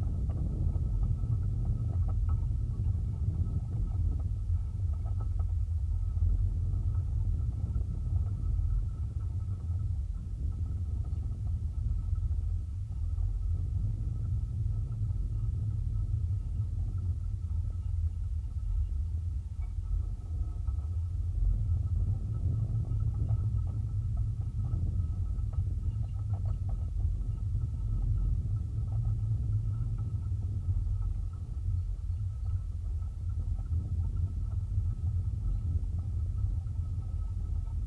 Klondike Park Bluff, Augusta, Missouri, USA - Klondike Park Bluff
Contact mics attached to a ¾ inch (2 cm) in diameter metal cable of a cable railing fence on bluff overlooking Missouri River and Labadie Energy Center off of the Powerline Trail in Klondike Park. Low sounds. Best with headphones.
Missouri, United States, 5 December 2020, ~16:00